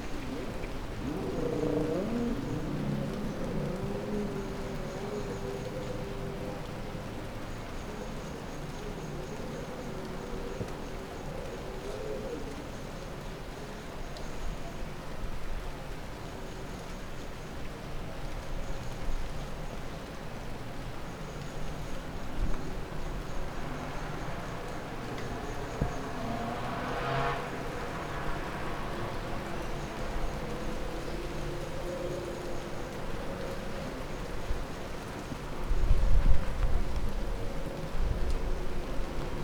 sounds of jetboat engines in the marina spreading all over the city.
Funchal, Portugal, 3 May 2015, ~11:00